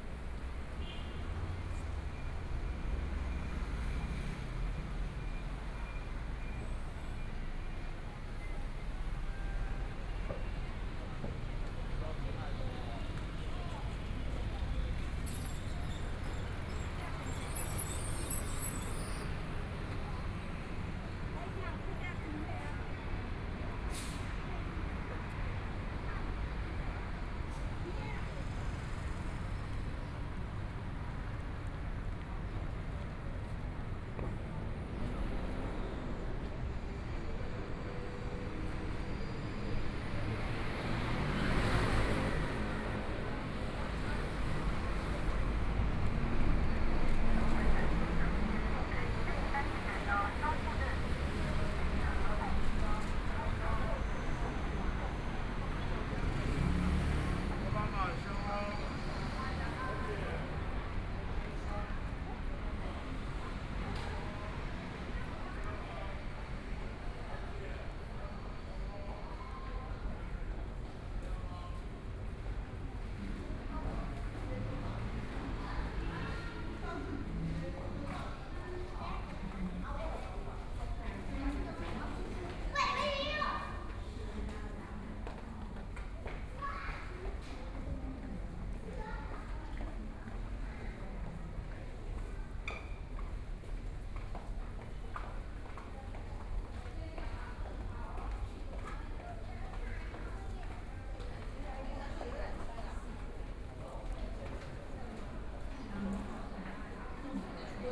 Jinzhou St., Zhongshan Dist. - walking in the Street
walking in the Street, Traffic Sound, From the park to the MRT station, Binaural recordings, ( Keep the volume slightly larger opening )Zoom H4n+ Soundman OKM II
Zhongshan District, Taipei City, Taiwan, 15 February